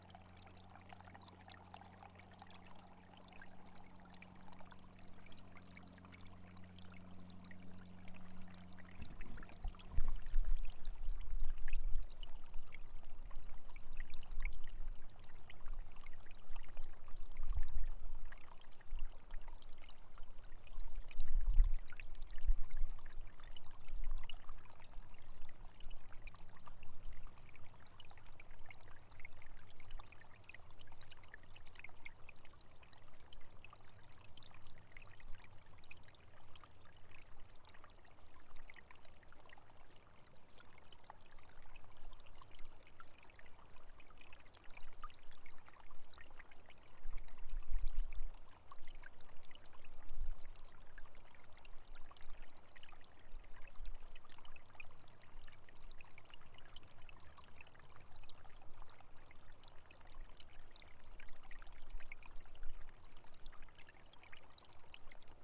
Gemeinde Keutschach am See, Österreich - murmel of water in quiet wood
small river is flowing carrying spring water. very joyful sound.
Plescherken, Austria, 16 February 2017